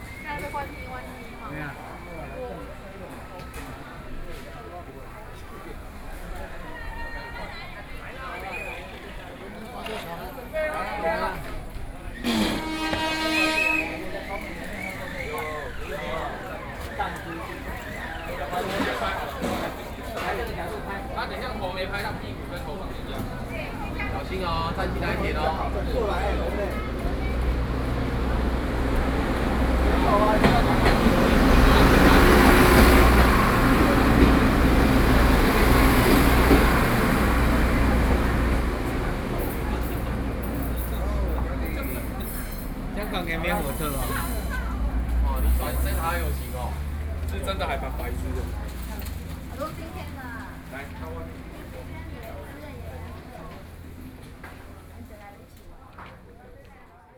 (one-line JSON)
{"title": "No., Shífēn St, Pingxi District, New Taipei City - Town streets", "date": "2012-11-13 15:23:00", "latitude": "25.04", "longitude": "121.78", "altitude": "180", "timezone": "Asia/Taipei"}